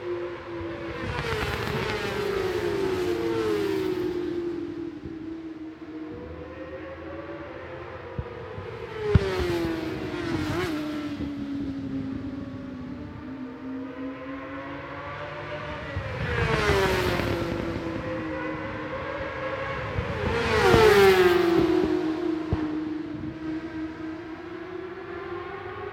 Brands Hatch GP Circuit, West Kingsdown, Longfield, UK - world superbikes 2004 ... supersport practice ...
world superbikes 2004 ... supersport 600 practice ... one point stereo mic to minidisk ... time approx ...
31 July 2004, 10:00